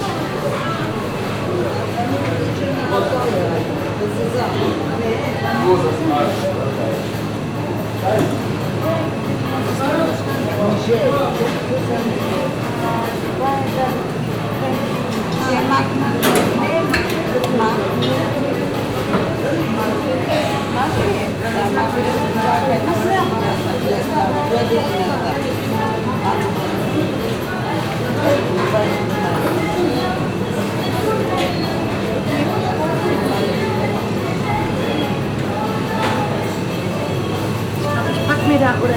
Ville Nouvelle, Tunis, Tunesien - tunis, rue de greece, supermarket
Inside a supermarket. The sound of arabian music thru a broken speaker, plastic bags, women talking, a german customer, the ventilation and a walk thru the shelves.
international city scapes - social ambiences and topographic field recordings
2 May 2012, 14:00